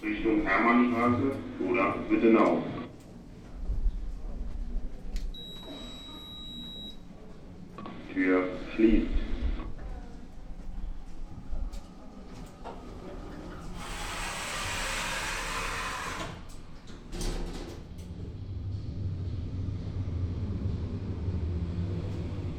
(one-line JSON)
{"title": "Rosenthaler Platz, Aufzug U8", "date": "2008-07-13 18:30:00", "description": "Sonntag, 13.07.2008, 18:30\nAufzug zwischen U8 und Zwischenbene, männliche Ansagerstimme. Umsteigen erforderlich, wenn man Strassenniveau erreichen möchte.", "latitude": "52.53", "longitude": "13.40", "altitude": "40", "timezone": "Europe/Berlin"}